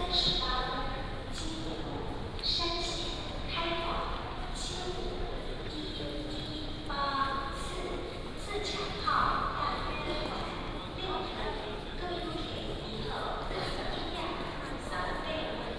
Walk into the station, Traffic sound, In the station hall, Station Message Broadcast